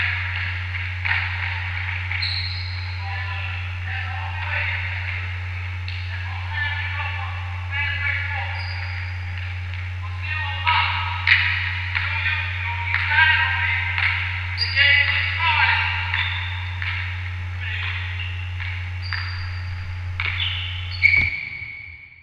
Muhlenberg College Hillel, West Chew Street, Allentown, PA, USA - Basketball Practice